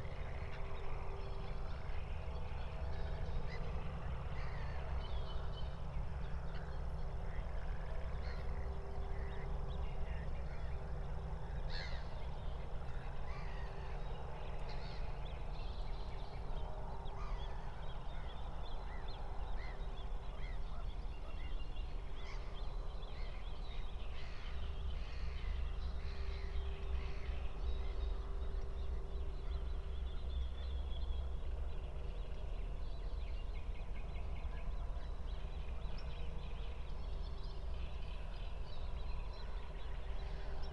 02:00 Berlin, Buch, Moorlinse - pond, wetland ambience
Deutschland, 30 May 2022